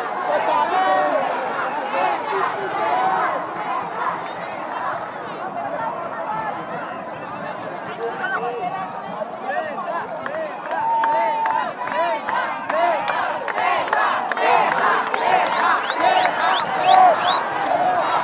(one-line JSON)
{"title": "Congresso Nacional - Manifestações", "date": "2013-06-20 17:30:00", "description": "Popular manifestation in front of the brazilian congress.", "latitude": "-15.80", "longitude": "-47.87", "altitude": "1060", "timezone": "America/Sao_Paulo"}